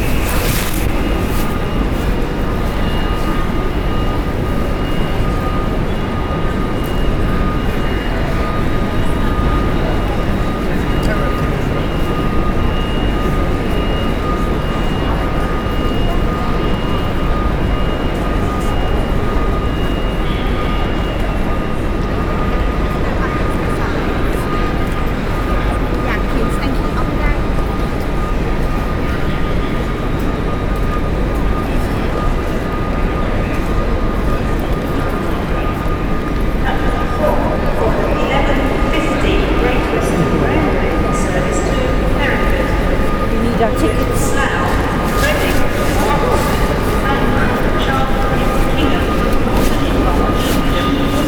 The busy Main Concourse at Paddington Station, London, UK - Paddington Concourse
The many sounds from the main concourse of this this very busy railway station. MixPre 6 II with 2 x Sennheiser MKH 8020s.
5 March, 14:59